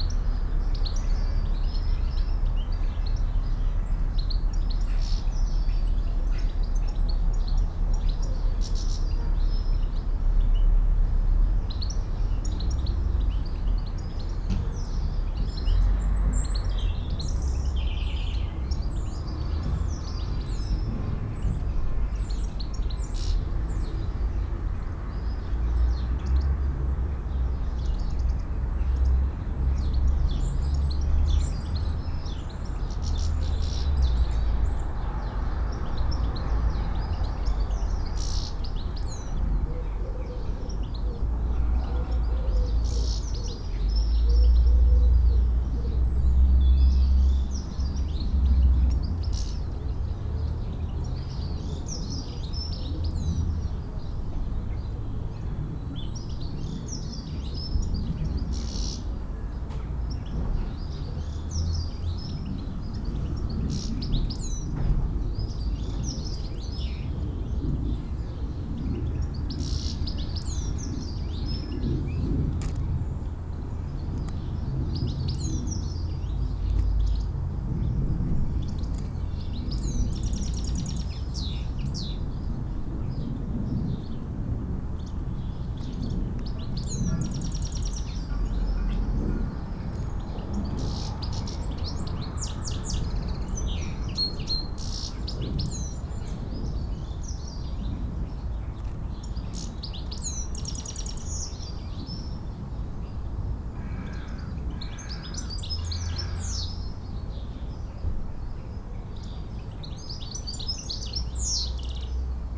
Church St, Kirkbymoorside, York, UK - inside church porch ... outside church yard ...
inside church porch ... outside church yard ... All Saints Church ... Kirkbymoorside ... lavalier mics clipped to sandwich box ... bird calls ... song ... from ... dunnock ... goldfinch ... house sparrow ... blue tit ... robin ... jackdaw ... collared dove ... wood pigeon ... carrion crow ... background noise ...
5 March 2019, ~08:00